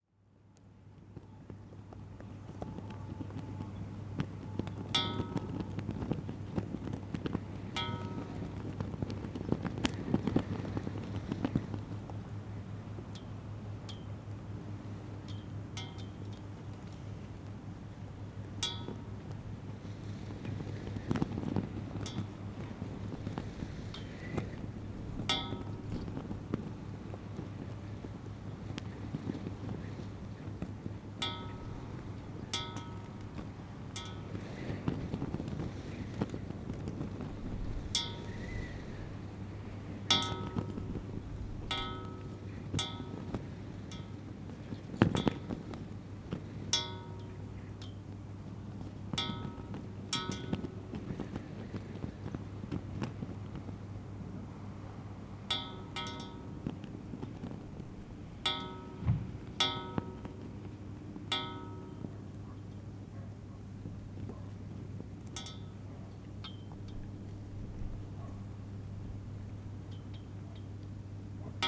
Cerro Sombrero, Primavera, Magallanes y la Antártica Chilena, Chile - storm log - cerro sombrero flagpole
flagpole - wind SW 24 km/h, ZOOM F1, XYH-6 cap
Cerro Sombrero was founded in 1958 as a residential and services centre for the national Petroleum Company (ENAP) in Tierra del Fuego.
Región de Magallanes y de la Antártica Chilena, Chile